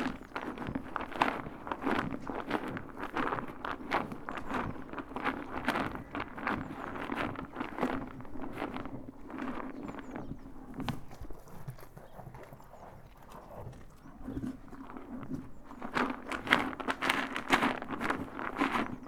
Punnetts Town, UK - Lizzie and Betty (cows) eating from bucket
Early morning feed for two cows (Lizzie and Betty) in farm building bottom yard. Concentrating on the mother Lizzie with Betty eating from another bowl. Good squeaks from tongue trying to get the very last food! Tascam DR-05 internal microphones.